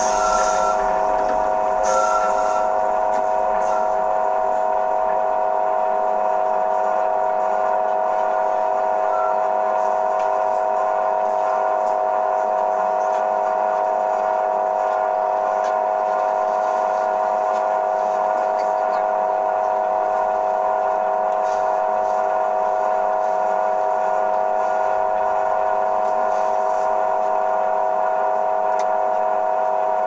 Tallinn, Baltijaam parking meter - Tallinn, Baltijaam parking meter (recorded w/ kessu karu)
hidden sounds, internal noises of a parking meter outside Tallinns main train station.